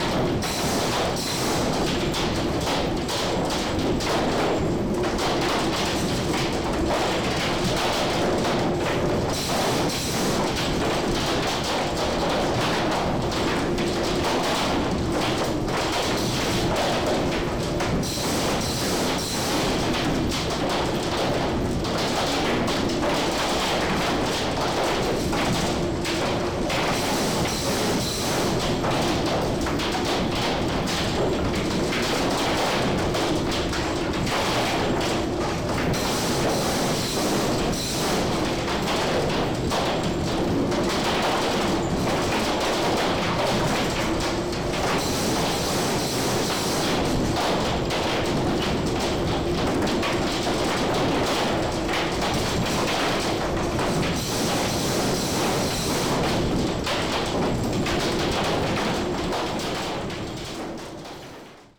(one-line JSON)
{"title": "Punta Arenas, Región de Magallanes y de la Antártica Chilena, Chile - storm log - seaweed drying process", "date": "2019-03-14 12:50:00", "description": "Seaweed drying process, wind = thunderstorm\n\"The Natural History Museum of Río Seco is located 13.5 km north (av. Juan Williams) of the city of Punta Arenas, in the rural sector of Río Seco, within the facilities of Algina SA; a seaweed drying Company, which have kindly authorized the use of several of their spaces for cultural purposes, as long as they do not interfere with the output of the Company. These facilities were built largely between 1903 and 1905, by the The South America Export Syndicate Lta. firm.\"", "latitude": "-53.06", "longitude": "-70.86", "altitude": "15", "timezone": "America/Punta_Arenas"}